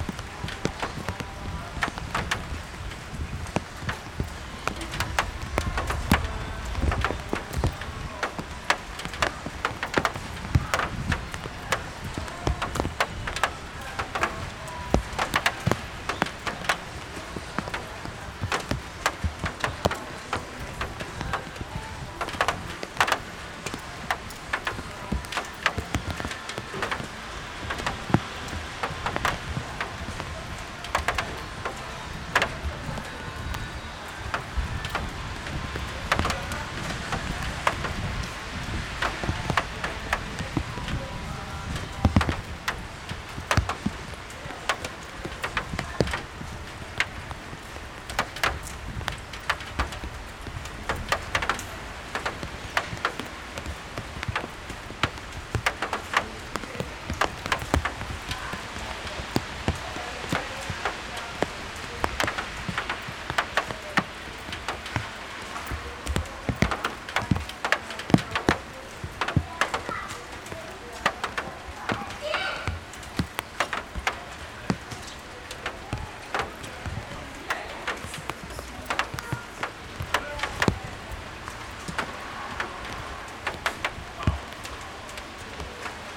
raindrops, walkers, talks, percussive sound from the microphone bag